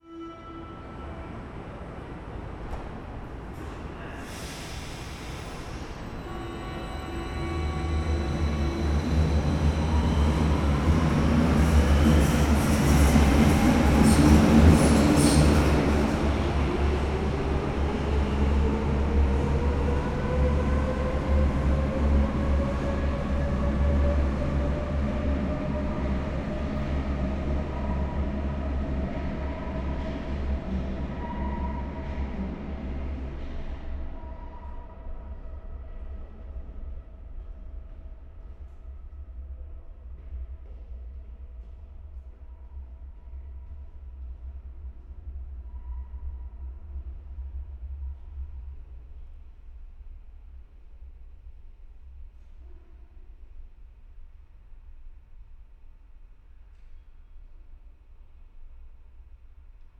klosterstr., berlin
U-Bahn / subway Klosterstr. Berlin. this is a very quiet station out of business hours. sunday evening station ambience, buzz of electric devices, trains passing
Berlin, Deutschland, 21 November